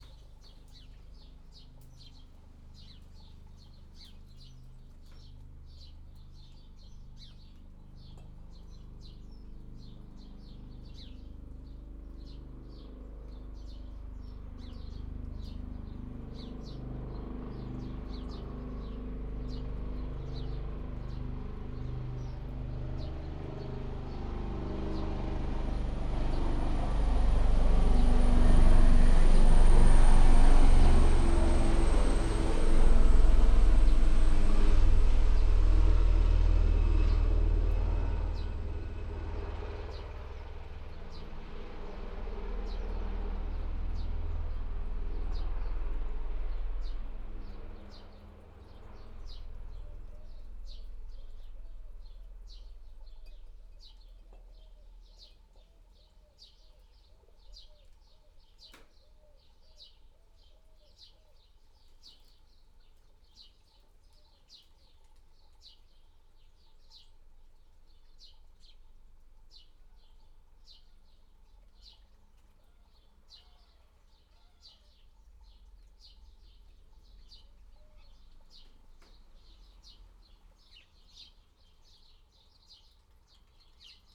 Luttons, UK - helicopter fly past ...
helicopter fly past ... lavalier mics clipped to door uprights ...